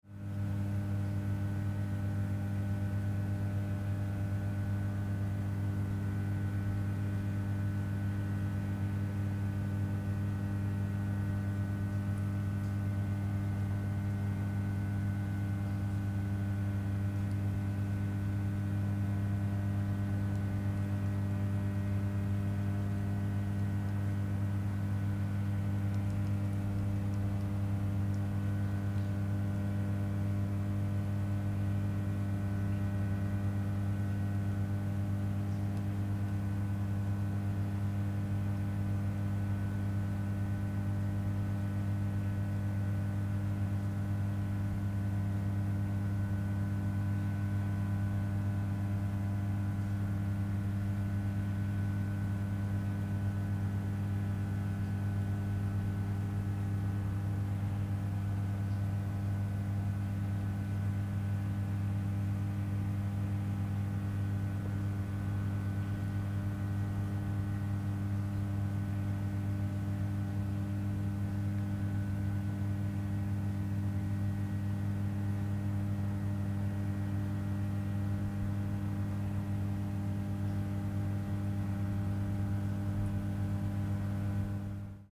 hum of electrical substation, Severodvinsk, Russia - hum of electrical substation
Hum of electrical substation.
Recorded on Zoom H4n.
Гул электроподстанции.
Arkhangelsk Oblast, Russia